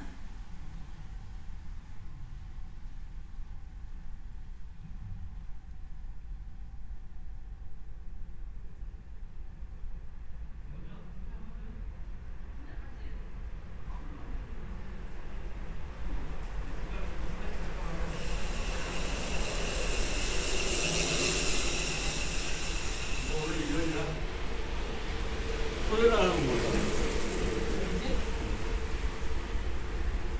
Somewhat sonically isolated from the surrounding combustion engine soundscape of Seoul, this 300(?) meter tunnel is a dedicated and well used cycle-way. As cyclist enter and exit from either end so do sounds emerge from relative silence. The sonic behaviour is odd and gives the place it's own particular characteristic. All sound sources are in continuous motion.
Gyeonggi-do, South Korea, 1 April 2018